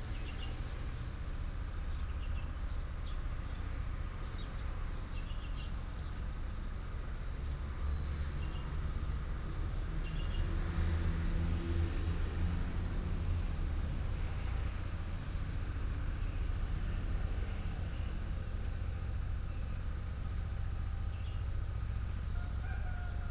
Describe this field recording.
In the square of the temple, Birds sound, Chicken sound, traffic sound, Sweeping voice